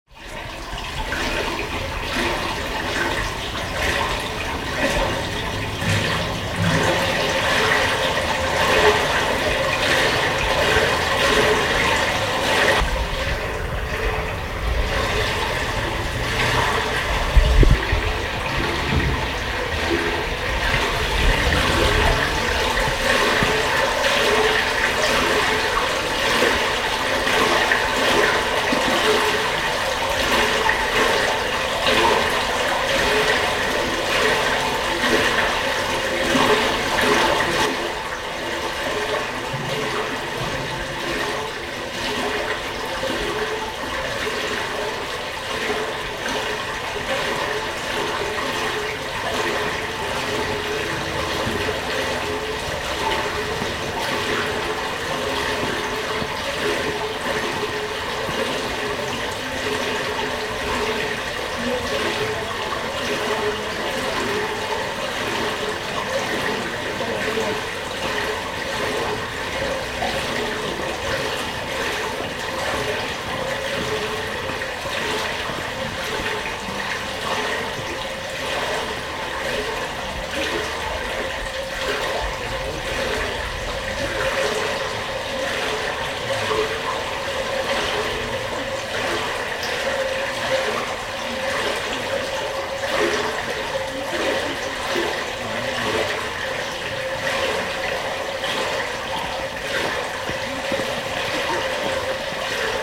velbert, langenberg, hauptstrasse, abwasserkanal
wasserabfluss unter kanaldeckel, direktmikophonierung mono
project: :resonanzen - neanderland soundmap nrw: social ambiences/ listen to the people - in & outdoor nearfield recordings